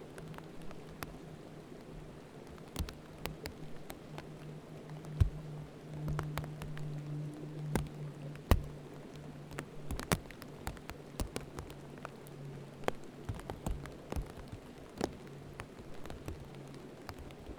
{"title": "neoscenes: changing the course of nature", "date": "2011-09-06 17:51:00", "latitude": "38.75", "longitude": "-106.43", "altitude": "3325", "timezone": "America/Denver"}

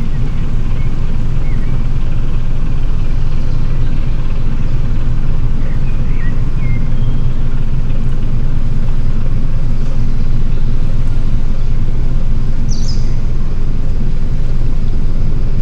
{"title": "Am Molenkopf, Köln, Deutschland - ship upstream", "date": "2000-06-15 11:20:00", "description": "heavy ship going slowly upstream", "latitude": "50.97", "longitude": "7.00", "altitude": "38", "timezone": "Europe/Berlin"}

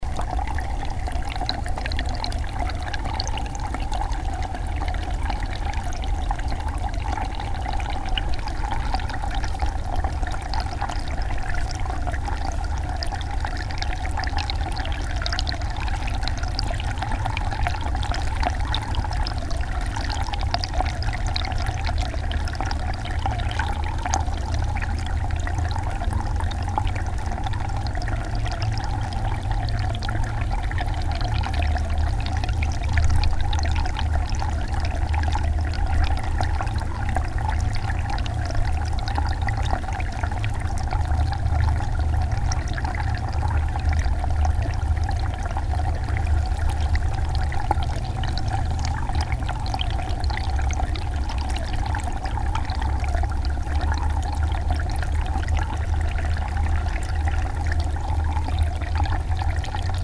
{"title": "vancouver, grouse mountain, small stream", "description": "no snow today, but autum cold wind breaze and a little sun. within the green sandy grass of the plain ski slope a small water stream\nsoundmap international\nsocial ambiences/ listen to the people - in & outdoor nearfield recordings", "latitude": "49.38", "longitude": "-123.08", "altitude": "1039", "timezone": "GMT+1"}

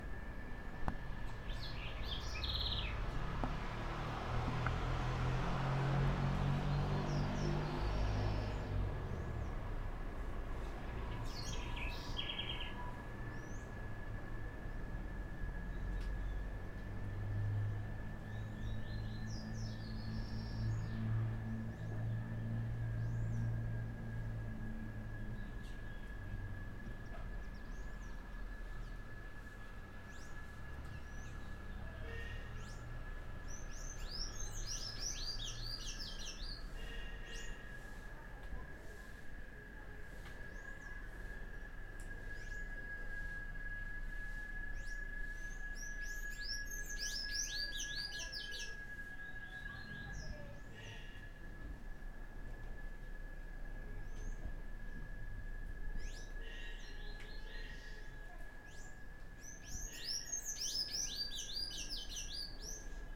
{"title": "Cruz das Almas, BA, Brasil - Rua da Jurema", "date": "2014-03-02 08:37:00", "description": "Esta gravação foi feita as 8 horas da manha, no quintal residencial localizado no centro da cidade de Cruz Das Almas Recôncavo da Bahia. O aparelho realizado para a captação de audio foi um PCM DR 40.", "latitude": "-12.67", "longitude": "-39.11", "timezone": "America/Bahia"}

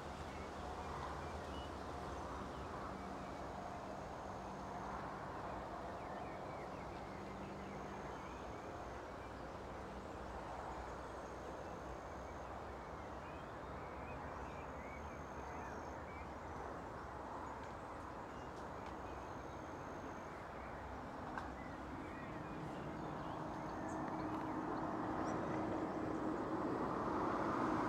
{"title": "Nova Gorica, Slovenija - Med trtami", "date": "2017-06-07 17:03:00", "description": "Recorded with Sony PCM-M10", "latitude": "45.96", "longitude": "13.65", "altitude": "97", "timezone": "Europe/Ljubljana"}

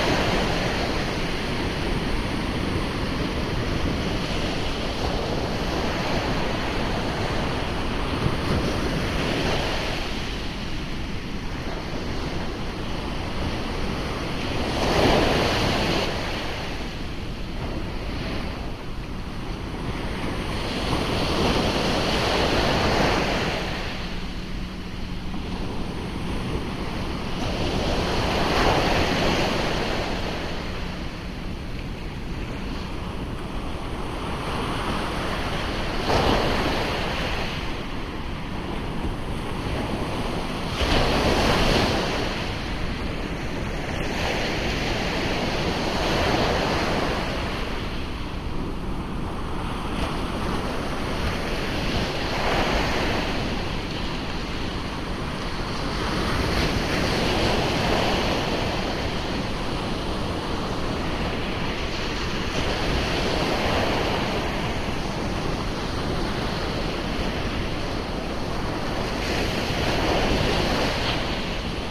{"title": "Dunkerque Braek beach surf - DK Braek beach surf", "date": "2009-04-18 21:15:00", "description": "Dunkerque, surf at the beach of the Digue du Braek. Binaural. Zoom H2, Ohrwurm binaural mics.", "latitude": "51.05", "longitude": "2.29", "timezone": "Europe/Berlin"}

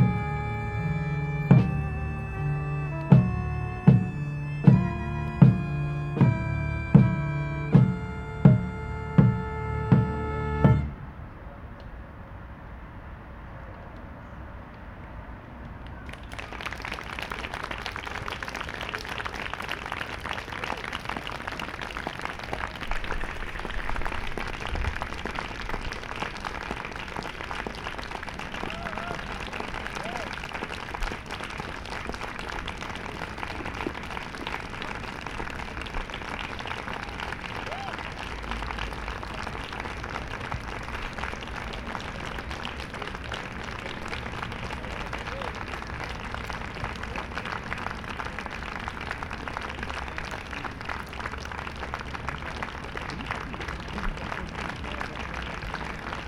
{"title": "Parc du Heyritz, Chem. du Heyritz, Strasbourg, Frankreich - Street Theater", "date": "2021-08-29 18:00:00", "description": "Next to the lifting bridge. A boat passing through, hence the alarm- beep sounds of the moving bridge. A street theater group acting on the lawn nearby, coming to the end of their performance.", "latitude": "48.57", "longitude": "7.74", "altitude": "141", "timezone": "Europe/Paris"}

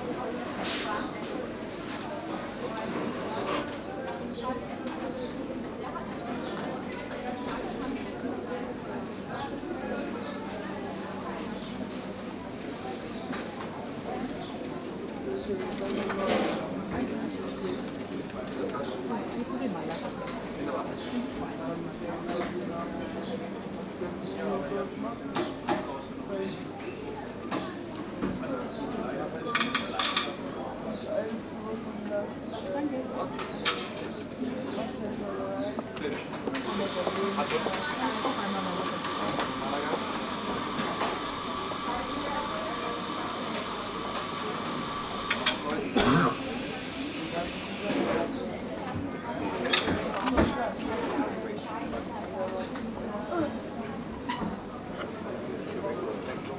{"title": "Sternplatz Eiscafe, Bayreuth, Deutschland - Sternplatz Eiscafe", "date": "2013-05-28 15:04:00", "description": "Sternplatz - Eiscafe\nolympus ls-5", "latitude": "49.94", "longitude": "11.58", "altitude": "343", "timezone": "Europe/Berlin"}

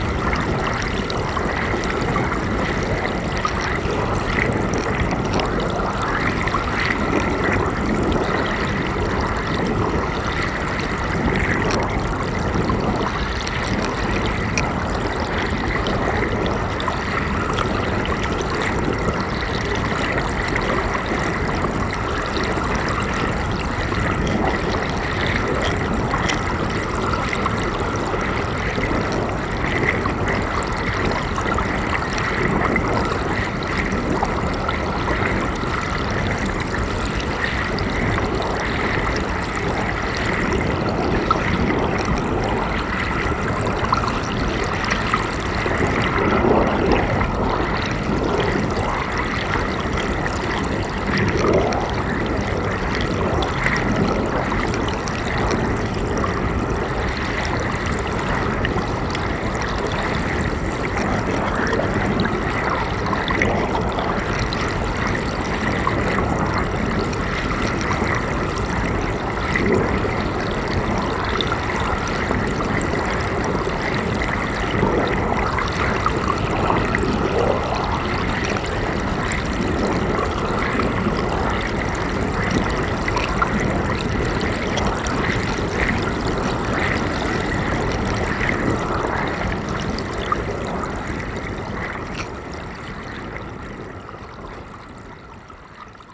{"title": "The Hopping Bridge, Mistley, Essex, UK - Thou Shalt Not Suffer A Witchfinder to Live", "date": "2012-07-18 20:00:00", "description": "It was at this spot that the notorious self-styled Witchfinder General, Matthew Hopkins, probably in 1645, subjected women to ‘swimming tests’ in which they were thrown into the water tied to a chair to see if they would float or sink, floating confirming them as witches (as fresh flowing ‘baptismal’ water would abhor a witch), sinking (with probably drowning) confirming their innocence.", "latitude": "51.95", "longitude": "1.07", "timezone": "Europe/London"}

